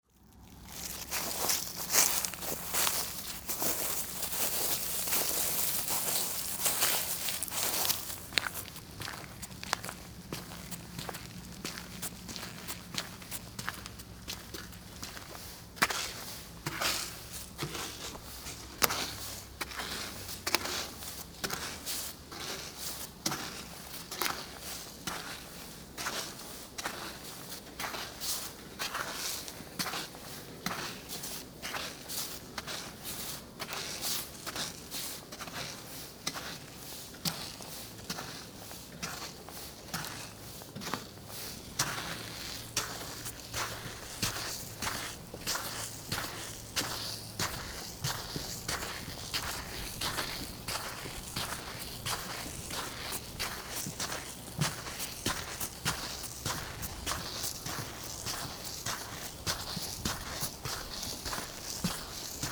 Saint-Mammès, France - Bridge on the Loing river
Walking on the completely frozen wooden bridge over the Loing river.